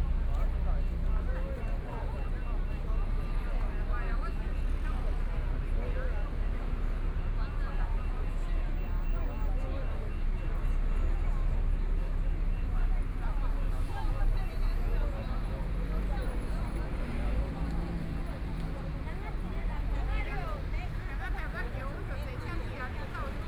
Minquan E. Rd., Songshan Dist. - At the intersection
At the intersection, Traffic Sound, The crowd waiting to cross the road, Binaural recordings, Zoom H4n + Soundman OKM II
Taipei City, Taiwan, 20 January 2014, ~16:00